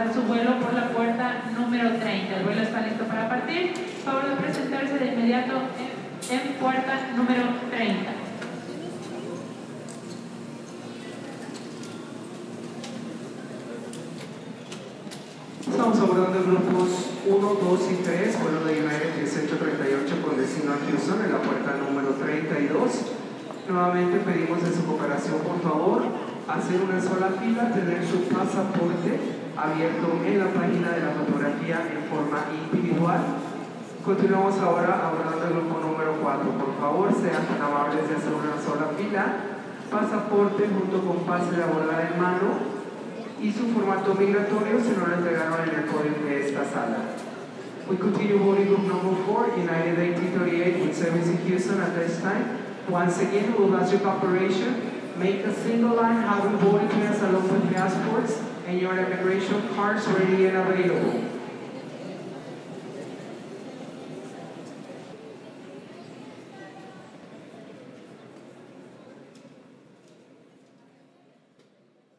Benito Juarez International Airport - Mexico
Ambiance hall d'embarcation
7 October 2019